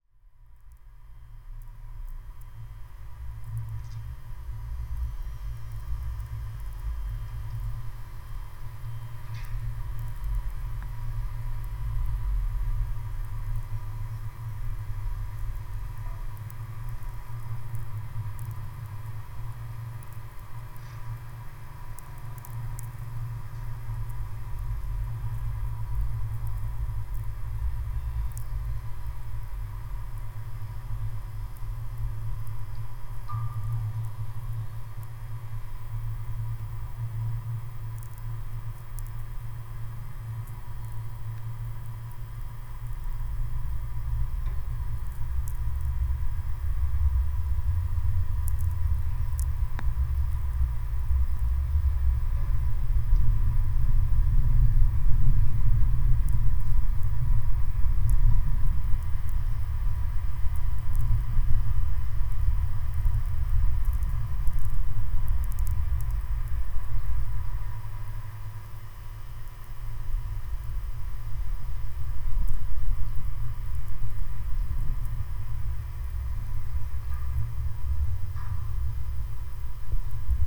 Vilnius, Lithuania, abandoned Rotonda caffee
there is an empty building of cult/ legendary caffee "Rotonda" in the centre of LIthuania capital. in soviet times it was place of meeting of artists, poets, etc...now it stands abandoned. contact microphones on metallic parts of the circullar building and electromagnetic antenna Priezor capturing electro atmosphere